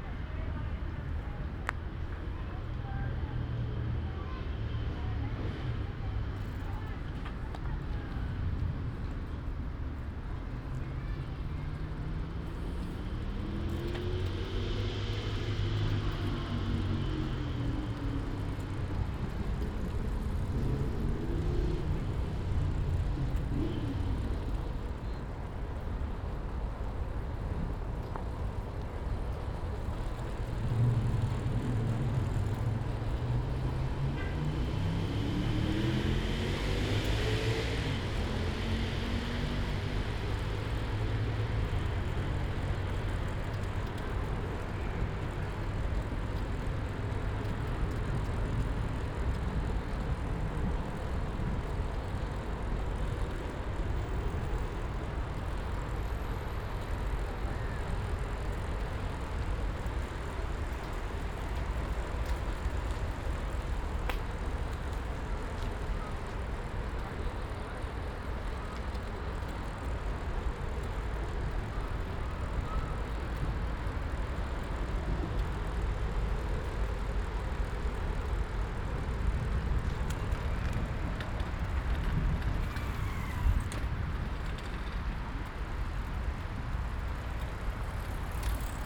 Ascolto il tuo cuore, città. I listen to your heart, city Chapter LXXXIV - Friday soundbike II on the banks of the Po River in the days of COVID19 Soundbike
"Friday soundbike II on the banks of the Po River in the days of COVID19" Soundbike"
Chapter LXXXIV of Ascolto il tuo cuore, città. I listen to your heart, city
Friday, July 3rd 2020. Biking on the bank of Po River, Valentino park, one hundred-fifteen days after (but day sixty-one of Phase II and day forty-eight of Phase IIB and day forty-two of Phase IIC and day 19th of Phase III) of emergency disposition due to the epidemic of COVID19.
Start at 4:28 p.m. end at 5:15 p.m. duration of recording 46’56”
The entire path is associated with a synchronized GPS track recorded in the (kmz, kml, gpx) files downloadable here:
Go to Chapter LXXXIV "Friday soundbike on the banks of the Po River in the days of COVID19" Soundbike", Friday, May 22th 2020. Similar path and time.
Piemonte, Italia, 3 July 2020, 16:28